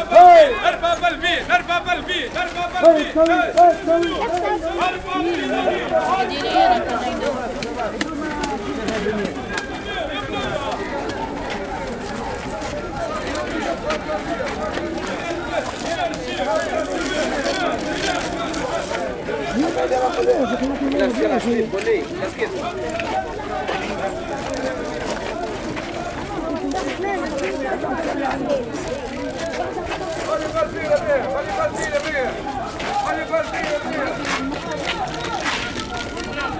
Souk de Bou Selsla, La Marsa, Tunisie - Balade entre les stands
Walk along the market